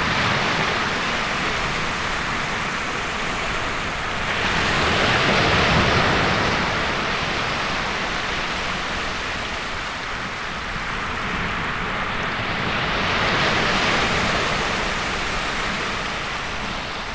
Waves breaking on the sea shore. Recorded on a Zoom H1n with two Clippy EM272 mics each clipped into a side pocket of a backpack.